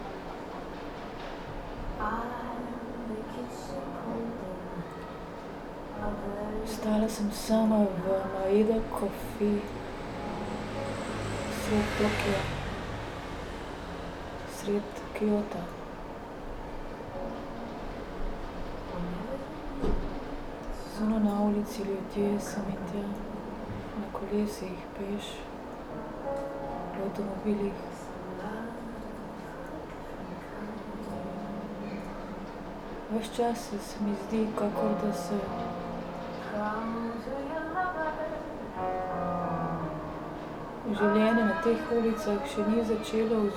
Nijo dori, Kyoto - bar, downtown Kyoto
only guest, left alone in a coffee bar on a slightly rainy afternoon, lady with red sweater went outside to take care of her things ...